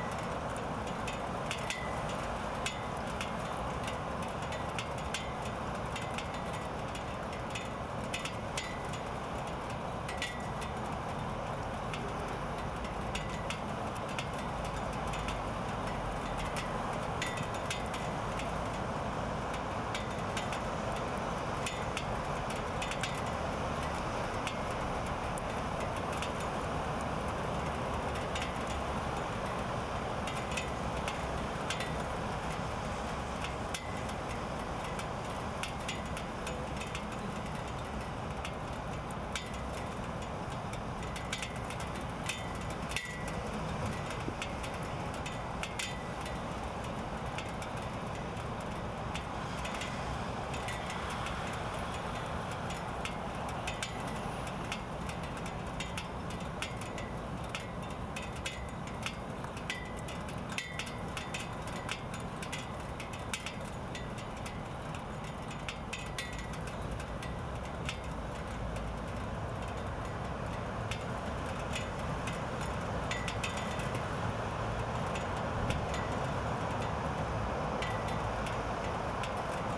Apartment Rooftop, Raindrops making ping sound
저녁 아파트 옥상, 빗방울이 난간에 떨어지는 소리
서울, 대한민국, 11 August 2019